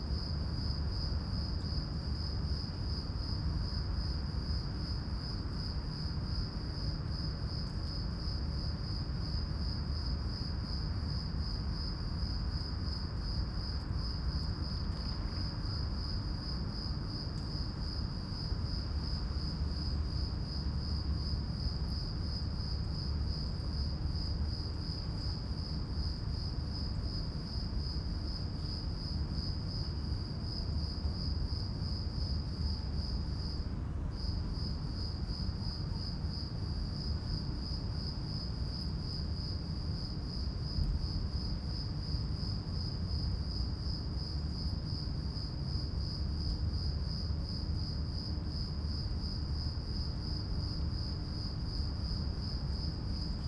Punto Franco Vecchio, Trieste, Italy - night ambience with bats and crickets
in front of former stables, night ambience, crickets echoing in the empty building, clicks of bats
(SD702, NT1A AB)